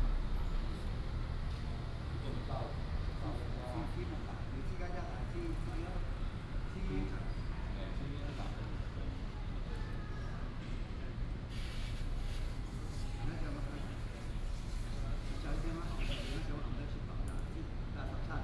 集福宮, Hsinchu City - In the square of the temple
In the square of the temple, traffic sound, bird sound, Binaural recordings, Sony PCM D100+ Soundman OKM II